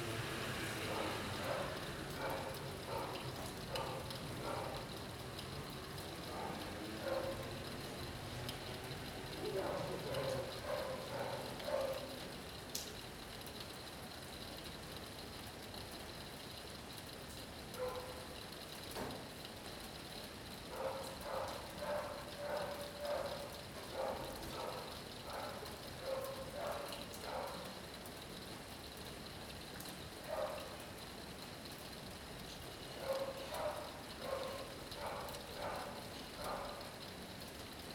{
  "title": "Courtyard, Vila de Gràcia, Barcelona, Spain - Rain, June 16th 2015 02",
  "date": "2015-06-16 19:15:00",
  "latitude": "41.40",
  "longitude": "2.16",
  "altitude": "75",
  "timezone": "Europe/Madrid"
}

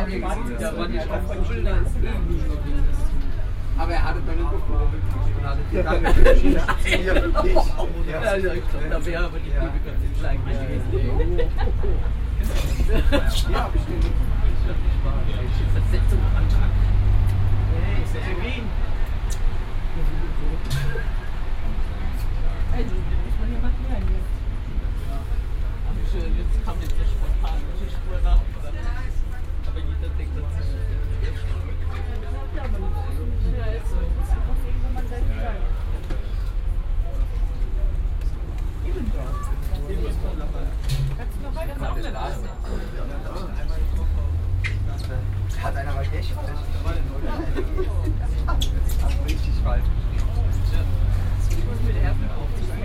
{
  "title": "Public Bus, Koblenz, Deutschland - Bus to main station Koblenz",
  "date": "2017-05-19 15:25:00",
  "description": "Two stations, from Löhr Center to main station, in a bus. Friday afternoon, people are talking.",
  "latitude": "50.36",
  "longitude": "7.59",
  "altitude": "80",
  "timezone": "Europe/Berlin"
}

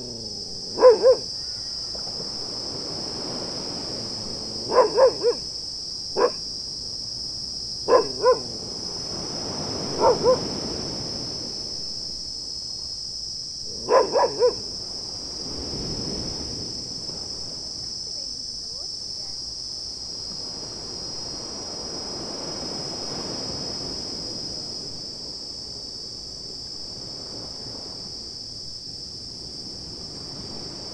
{
  "title": "Koh Phayam, Thailand - Evening recording outside the bungalow",
  "date": "2013-05-16 19:38:00",
  "description": "Beach, cicadas, barking dog, very very relaxed",
  "latitude": "9.76",
  "longitude": "98.41",
  "altitude": "18",
  "timezone": "Asia/Bangkok"
}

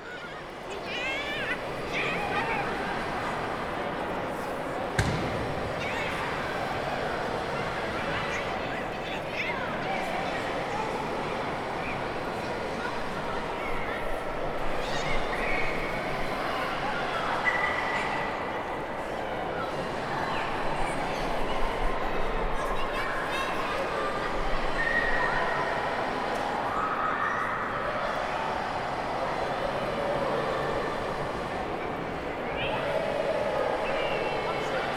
{
  "title": "Tate Modern, London, UK - Turbine Hall - Superflex One Two Three Swing.",
  "date": "2018-03-26 10:30:00",
  "description": "Turbine Hall - Superflex One Two Three Swing installation.\nRecorded from directly under the large swinging silver ball in the massive Turbine Hall. Many children having great fun on the huge swings.\nRecorded on a Zoom H5.",
  "latitude": "51.51",
  "longitude": "-0.10",
  "altitude": "6",
  "timezone": "Europe/London"
}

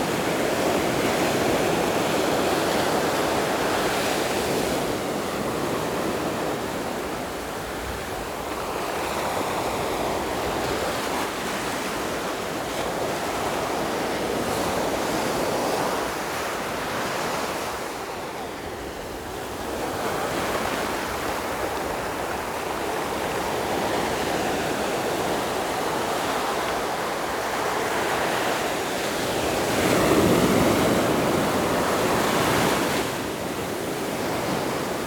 {
  "title": "Yilan County, Taiwan - sound of the waves",
  "date": "2014-07-26 16:40:00",
  "description": "In the beach, Sound of the waves\nZoom H6 MS+ Rode NT4",
  "latitude": "24.80",
  "longitude": "121.82",
  "timezone": "Asia/Taipei"
}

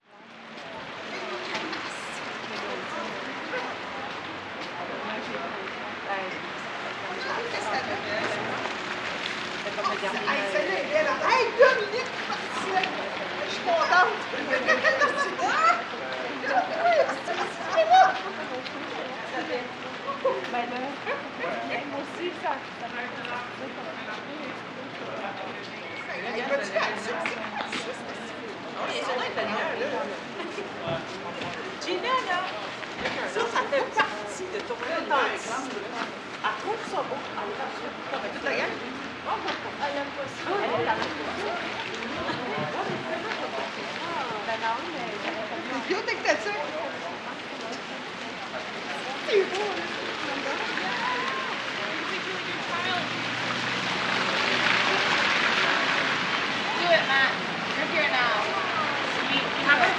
{
  "title": "Rue Saint Paul Est Local, Montréal, QC, Canada - Marché Bonsecours",
  "date": "2021-01-02 18:19:00",
  "description": "Recording of pedestrians, a dog, and music being played by a passing vehicle.",
  "latitude": "45.51",
  "longitude": "-73.55",
  "altitude": "24",
  "timezone": "America/Toronto"
}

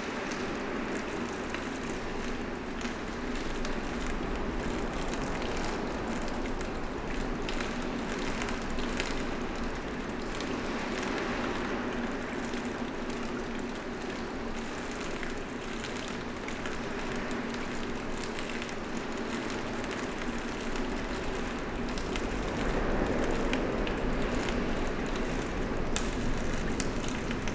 {
  "title": "Dinant, Belgium - Charlemagne bridge",
  "date": "2017-09-29 11:00:00",
  "description": "Inside the Charlemagne bridge, sound of the water collected in strange curved tubes. Water is flowing irregularly.",
  "latitude": "50.24",
  "longitude": "4.92",
  "altitude": "97",
  "timezone": "Europe/Brussels"
}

